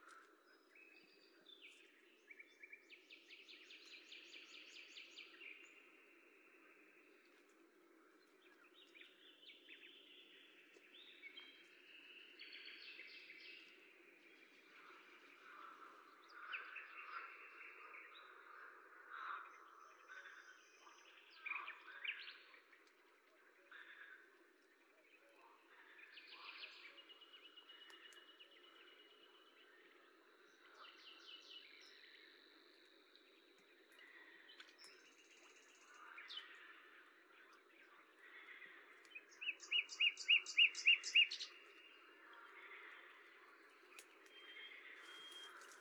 Lavours, France - printemps dans le Bugey
10/05/1998 vers 22H00 Marais de Lavours
Tascam DAP-1 Micro Télingua, Samplitude 5.1